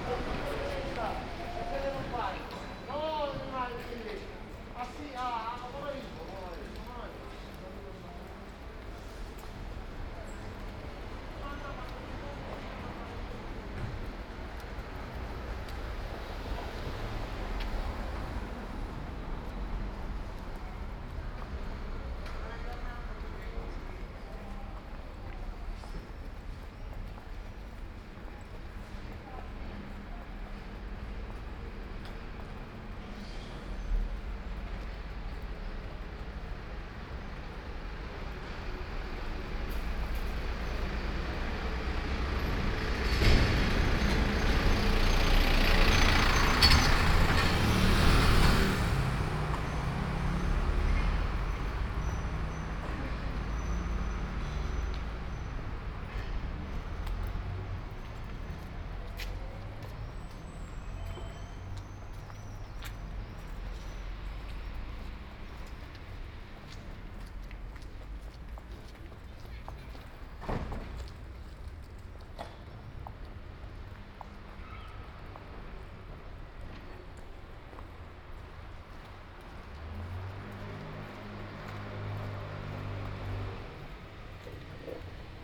Ascolto il tuo cuore, città. I listen to your heart, city. Several chapters **SCROLL DOWN FOR ALL RECORDINGS** - Morning AR with break in the time of COVID19 Soundwalk
"47-Morning AR with break in the time of COVID19" Soundwalk
Chapter XLVII of Ascolto il tuo cuore, città. I listen to your heart, city
Thursday April 16th 2020. Round trip through San Salvario district, the railway station of Porta Nuova and Corso Re Umberto, thirty seven days after emergency disposition due to the epidemic of COVID19.
Round trip are two separate recorded paths: here the two audio fils are joined in a single file separated by a silence of 7 seconds.
First path: beginning at 7:36 a.m. duration 20’43”
second path: beginning at 8:26 a.m. duration 34’20”
As binaural recording is suggested headphones listening.
Both paths are associated with synchronized GPS track recorded in the (kmz, kml, gpx) files downloadable here:
first path:
second path:
Piemonte, Italia, 16 April 2020, 07:36